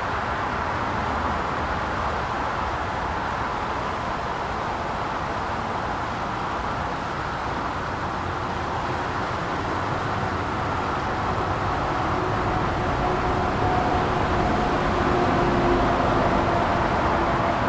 {"title": "St Paul Crescent, St. Catharines, ON, Canada - The Twelve | St. Paul Crescent Truss Bridge", "date": "2020-07-21 14:20:00", "description": "This recording mixes audio recorded on and in the water below the old truss bridge on St. Paul Crescent (long closed to vehicular traffic), just south of the higher Burgoyne Bridge. Beneath it flows the Twelve Mile Creek, just north of the confluence of Dick’s Creek and the Twelve. Dick’s Creek is named for Richard Pierpoint, a significant person in Ontario Black history and Twelve Mile is named for the distance of its mouth from the Niagara River. A section of Dick’s was buried with the construction of the Highway 406 Extension, which opened in 1984, and surfaces barely east of the confluence. The highway runs perpendicular and just east to the truss bridge and is the source of the traffic sound. I lowered a hydrophone from the bridge into the Twelve. The current here is very strong and controlled by Ontario Power Generation further upstream on the Twelve.", "latitude": "43.15", "longitude": "-79.25", "altitude": "85", "timezone": "America/Toronto"}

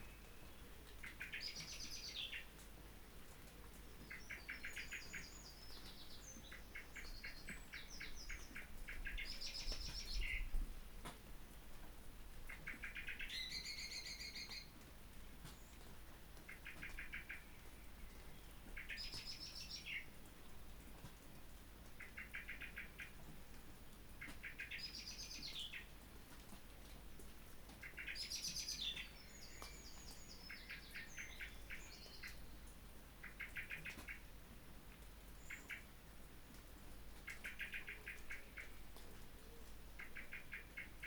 {"title": "Luttons, UK - Under the shed ... in the rain ...", "date": "2017-06-03 04:30:00", "description": "Under the shed ... in the rain ... starts with a swallow 'chattering' close to its nest ... then calls and song from ... blackbird and wren ... recorded with Olympus LS 14 integral mics ...", "latitude": "54.12", "longitude": "-0.54", "altitude": "76", "timezone": "Europe/London"}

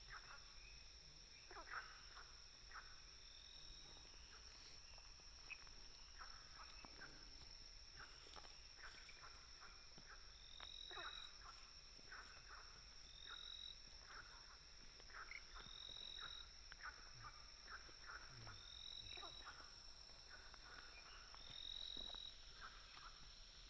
{"title": "Nantou County, Taiwan - Frogs chirping", "date": "2015-04-29 19:19:00", "description": "Frogs chirping, Firefly habitat area", "latitude": "23.93", "longitude": "120.90", "altitude": "756", "timezone": "Asia/Taipei"}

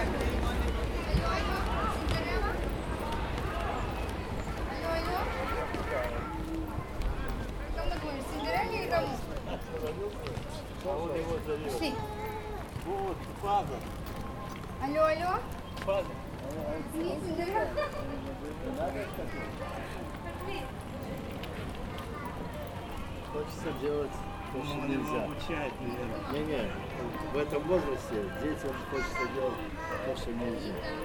{"title": "Brighton Beach, Playground, Brooklyn NY, USA - Walking On the Boardwalk Past Brighton Playground", "date": "2012-10-26 12:44:00", "description": "On the boardwalk at Brighton Beach Seashore, Brooklyn, NY, walking past the Brighton Playground. Children playing on swings, young men playing basketball, people speaking Russian on the benches, children in strollers.", "latitude": "40.57", "longitude": "-73.96", "timezone": "America/New_York"}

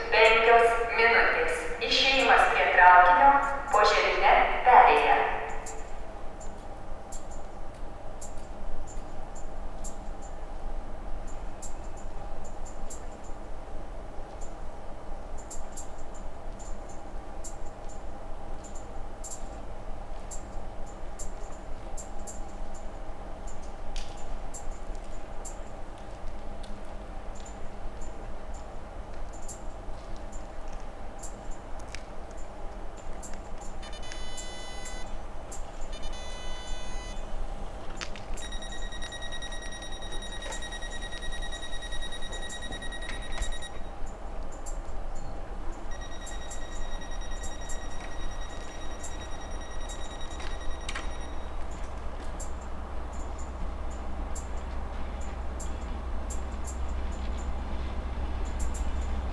{"title": "Stotis, Vilnius, Lithuania - Vilnius train station platform", "date": "2021-01-30 17:26:00", "description": "Vilnius train station platform sounds; recorded with ZOOM H5.", "latitude": "54.67", "longitude": "25.28", "altitude": "145", "timezone": "Europe/Vilnius"}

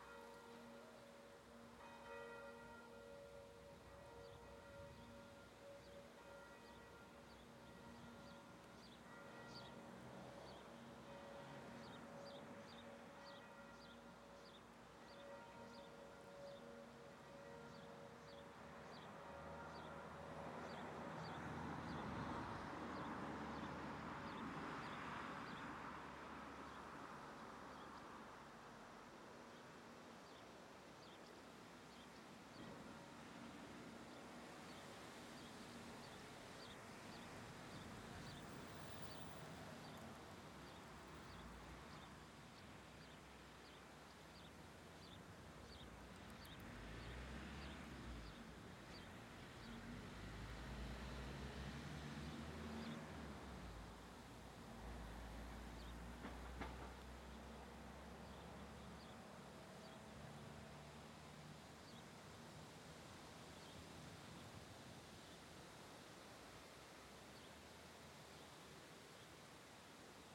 {"title": "Grosbreuil, France - Village", "date": "2017-07-20 16:00:00", "description": "Atmosphere village, Vendée very quiet, bells in the distance, wind_in_the leaves\nby F Fayard - PostProdChahut\nSound Device 633, MS Neuman KM 140-KM120", "latitude": "46.54", "longitude": "-1.61", "altitude": "40", "timezone": "Europe/Paris"}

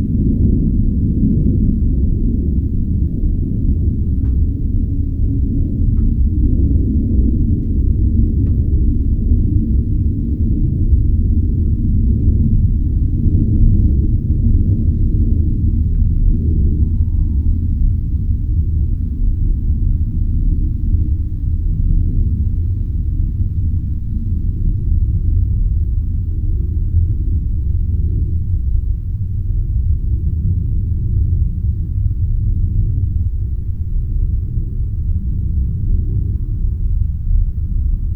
{"title": "A Simple Event, Malvern, UK - Event", "date": "2021-06-11 03:57:00", "description": "A simple event, almost nothing, in the middle of the night. A jet plane, a quiet voice and a car passes.\nMixPre 6 II with 2 x Sennheiser MKH 8020s.\n(I learned from this to not use a limiter with Reaper. It makes a noise)", "latitude": "52.08", "longitude": "-2.33", "altitude": "120", "timezone": "Europe/London"}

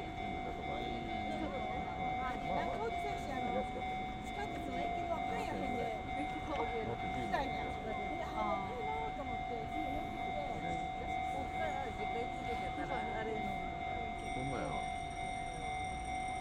{"title": "Anshusajikicho, Yamashina Ward, Kyoto, Kyoto Prefecture, Japan - 201811241756 JR Yamashina Station Roundabout Train Crossing", "date": "2018-11-24 17:56:00", "description": "Title: 201811241756 JR Yamashina Station Roundabout Train Crossing\nDate: 201811241756\nRecorder: Zoom F1\nMicrophone: Roland CS-10EM\nLocation: Yamashina, Kyoto, Japan\nGPS: 34.992086, 135.817323\nContent: trains crossing people conversation japanese traffic yamashina jr old man young woman binaural japan", "latitude": "34.99", "longitude": "135.82", "altitude": "68", "timezone": "Asia/Tokyo"}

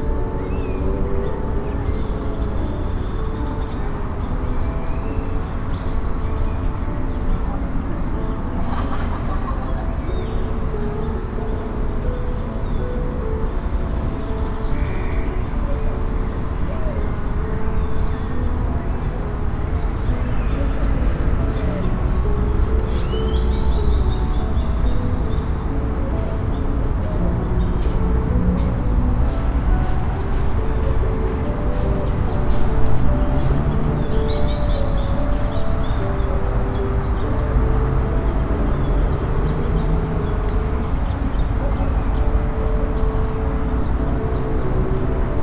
{"title": "C. Calz. de los Heroes, La Martinica, León, Gto., Mexico - Forum Cultural Guanajuato, Calzada de las Artes.", "date": "2022-06-03 13:06:00", "description": "Forum Cultural Guanajuato, Calzada de las Artes.\nEveryday environmental sound in the Calzada del Forum Cultural Guanajuato where you can distinguish the sound of birds, people passing by, background music from the speakers of the place, and some vehicles on the street.\nI made this recording on june 3rd, 2022, at 1:06 p.m.\nI used a Tascam DR-05X with its built-in microphones and a Tascam WS-11 windshield.\nOriginal Recording:\nType: Stereo\nSonido ambiental cotidiano en la Calzada del Forum Cultural Guanajuato donde se alcanza a distinguir el sonido de los pájaros, gente que va pasando, música de fondo de las bocinas del lugar y algunos vehículos en la calle.\nEsta grabación la hice el 3 de junio de 2022 a las 13:06 horas.", "latitude": "21.12", "longitude": "-101.66", "altitude": "1799", "timezone": "America/Mexico_City"}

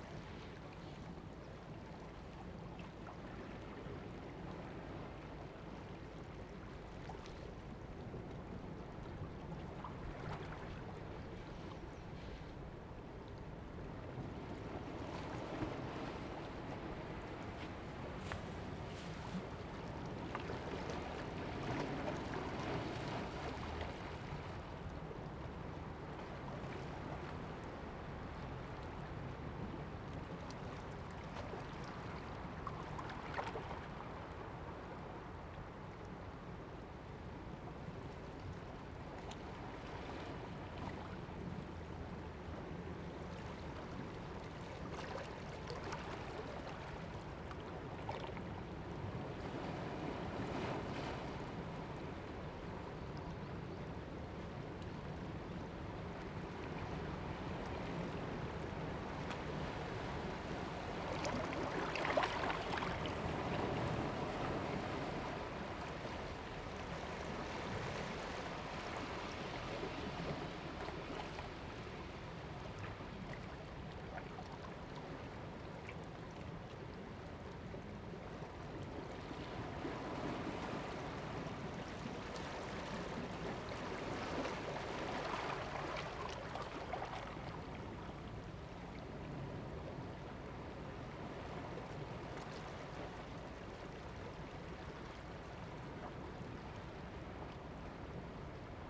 {"title": "Jizatay, Ponso no Tao - Small pier", "date": "2014-10-30 09:52:00", "description": "Small pier, Sound of the waves\nZoom H2n MS +XY", "latitude": "22.03", "longitude": "121.54", "altitude": "6", "timezone": "Asia/Taipei"}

